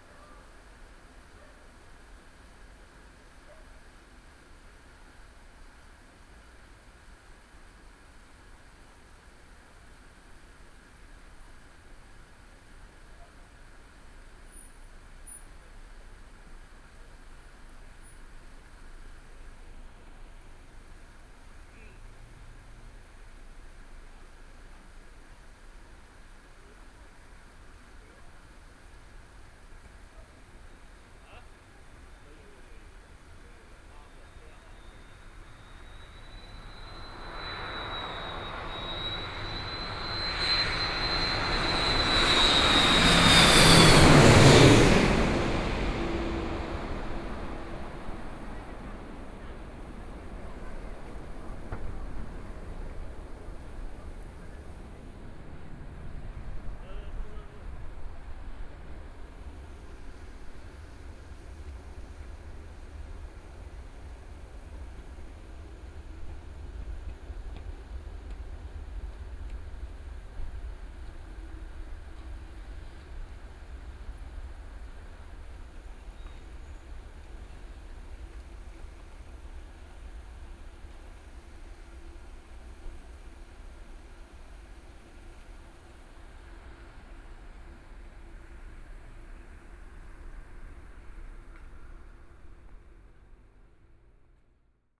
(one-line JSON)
{"title": "大佳里, Taipei city - Aircraft flying through", "date": "2014-02-15 16:02:00", "description": "Traffic Sound, Aircraft flying through, Binaural recordings, ( Keep the volume slightly larger opening )Zoom H4n+ Soundman OKM II", "latitude": "25.07", "longitude": "121.54", "timezone": "Asia/Taipei"}